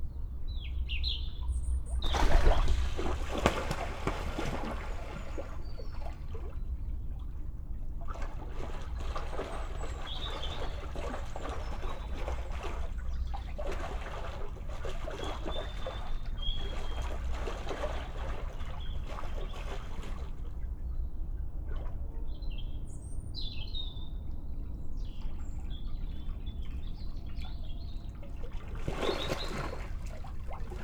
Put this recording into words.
Mallard sparring and mating. I like the near and distant sounds and the movement of focus left and right. MixPre 6 II with 2 Sennheiser MKH 8020 on a table top 1 metre from the edge of the water.